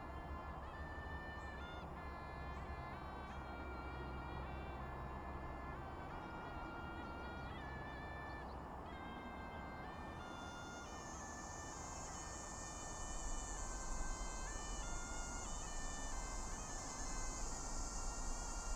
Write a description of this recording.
Near high-speed railroads, traffic sound, birds sound, Suona, Zoom H6XY